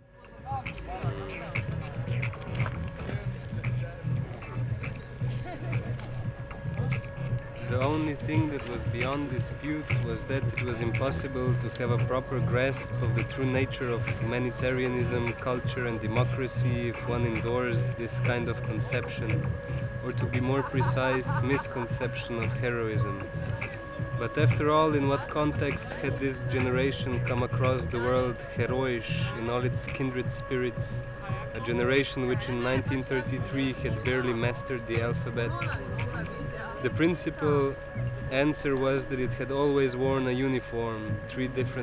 River Drava, Maribor, Slovenia - polenta festival activity
during the polenta festival, various groups and projects perform at the so called beach near river Drava. here: improvised reading of texts against heroism.
(SD702 Audio technica BP4025)
30 July 2012, 18:30